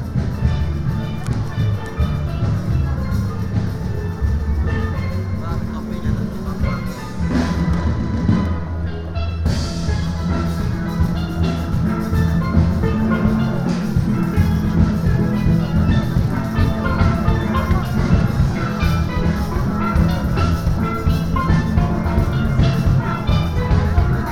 Broad St, Reading, UK - Christmas on Broad Street Soundwalk (East to West)
A short soundwalk along the pedestrianised section of Broad Street in Reading from east to west, passing the RASPO steel pan orchestra, buskers, small PAs on pop-up stalls and the local Salvation Army band. Binaural recording using Soundman OKM Classics and windscreen 'ear-muffs' with a Tascam DR-05 portable recorder.